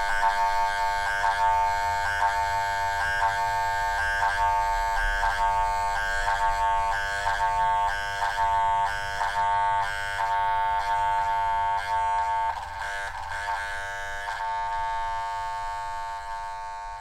Kaliningrad, Russia, underwater pump
hydrophone in the water in hope to hear some living creatures. all what I heard was some pump working